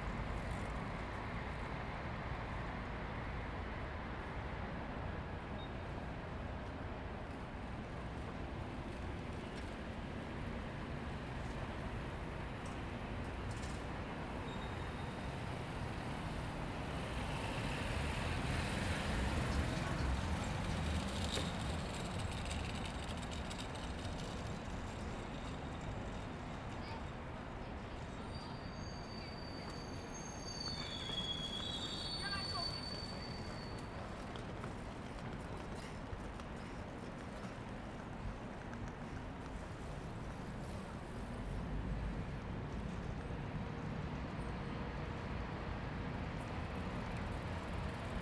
De Ruijterkade, Amsterdam, Nederland - Wasted Sound Central Station
Wasted Time
‘‘Wasted time also changes the concept of wasted as a negative thing. In a creative process it is wasting time that clears the mind or sharpens the mind so creation is possible. ....... But for any kind of occupation it is necessary to alternate working or using time with not working or un-using time.’’
Noord-Holland, Nederland, 4 December 2019, 13:05